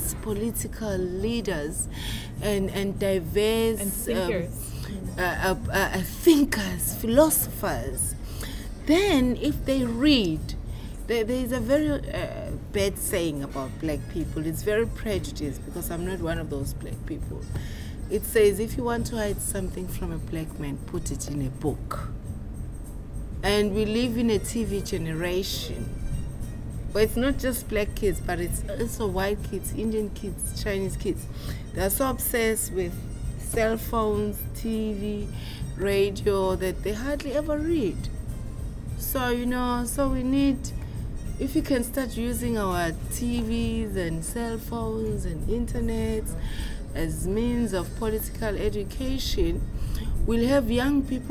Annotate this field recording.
The terrace of the BAT centre. Overlooking Durban's port. Jazz form the Cafe Bar. And April's elections in South Africa approaching. The Durban poet, writer and activist Faith ka-Manzi talks about her vision of complete freedom and her wish for political education of young people. Attitudes of "don't say a word!" are lingering not only in old township stories; which, none the less, she also tells. Faith ka-Manzi has been engaged in numerous political campaigns and is particularly concerned about issues of gender and sexual rights.